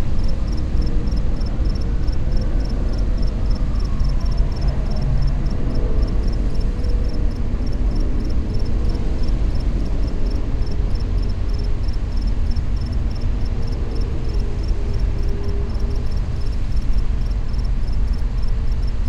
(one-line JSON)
{"title": "N Mission Rd, Los Angeles, CA, USA - Piggyback Yard", "date": "2018-07-20 20:42:00", "description": "A current railroad yard, this large parcel is favored for a future ecological restoration.", "latitude": "34.06", "longitude": "-118.22", "altitude": "96", "timezone": "America/Los_Angeles"}